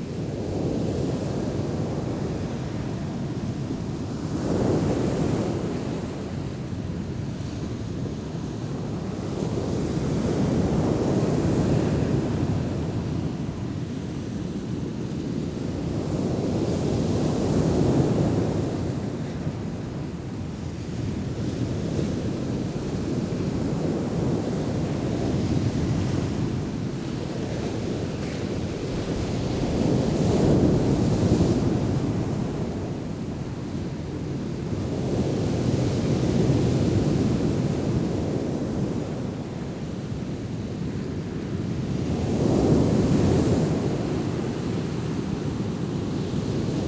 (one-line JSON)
{"title": "Paraporti Beach, Andros, Greece - Paraporte beach on a windy day with high surf", "date": "2019-07-01 18:00:00", "description": "Paraporti beach on a very windy July day, creating a high surf crashing onto the beach. Recording made using a DPA4060 pair to a Tascam HDP1.", "latitude": "37.84", "longitude": "24.94", "altitude": "1", "timezone": "GMT+1"}